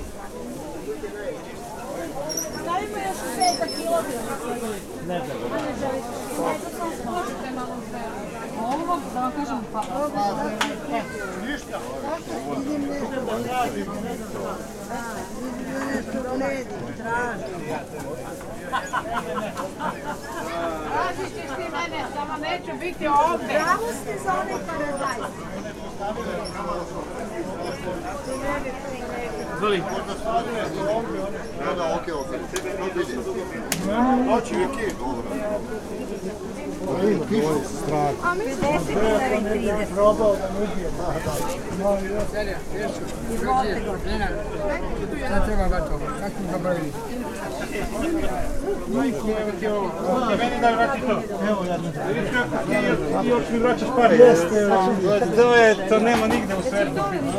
Đeram, Belgrade, Serbia - Deram Pijaca
A Soundwalk through Đeram Market in Belgrade
30 August 2013, 10:24